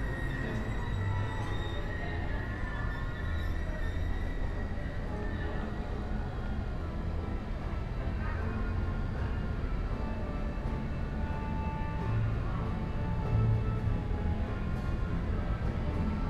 city castle, maribor - wind rattles, street musicians
Maribor, Slovenia, 27 June 2014